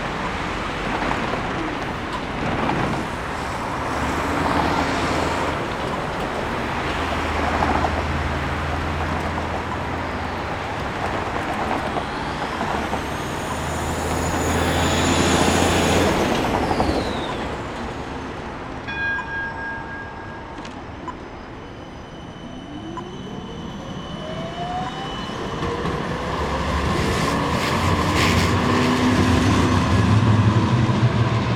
Corner of Alexandra Parade and Nicholson St, Carlton - Part 1 of peculiar places exhibition by Urban Initiatives; landscape architects and urban design consultants
peculiar places exhibition, landscape architecture, urban, urban initiatives